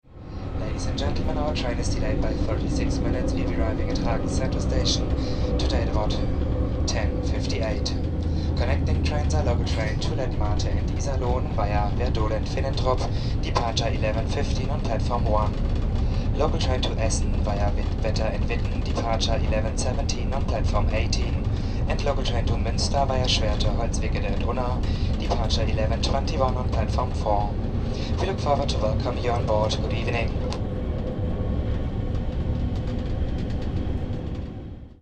hagen, replacement train - announcement: approaching hagen
07.01.2009 22:53 train approaching Hagen, announcement and noise in the cabin.
Deutschland, January 2009